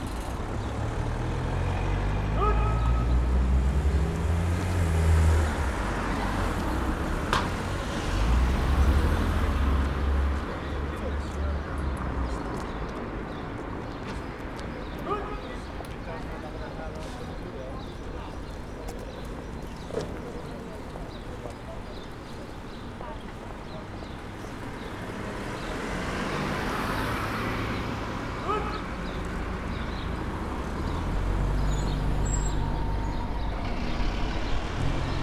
Berlin: Vermessungspunkt Maybachufer / Bürknerstraße - Klangvermessung Kreuzkölln ::: 15.04.2011 ::: 11:48
Berlin, Germany, 15 April